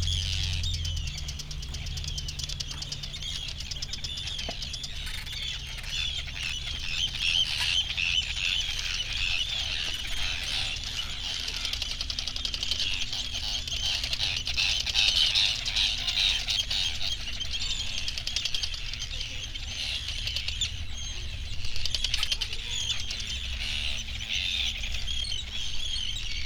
North Sunderland, UK - arctic tern colony ...
Inner Farne ... Farne Islands ... arctic tern colony ... they actively defend their nesting and air space ... and then some ... background noise from people ... planes ... boats and creaking boards ... warm dry sunny day ... parabolic ...